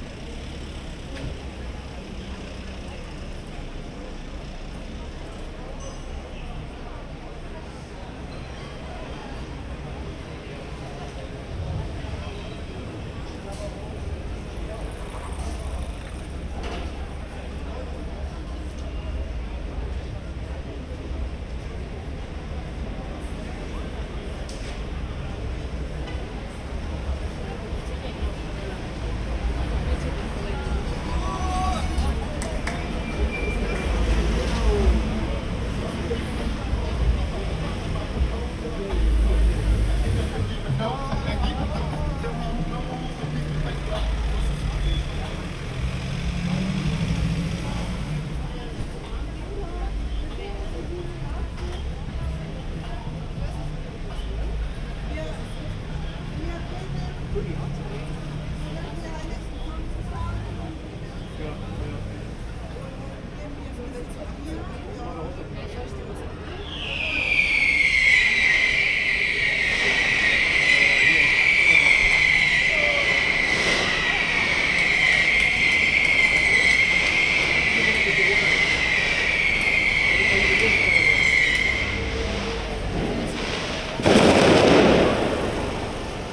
Brabanter Str., Köln, Deutschland - Summer night with rogue fireworks
City street, summer night, people talking, car traffic, cafés, and some people having their (most probably unauthorised) private fireworks. Recorded with Zoom H3-VR, converted to Binaural - use headphones.
Nordrhein-Westfalen, Deutschland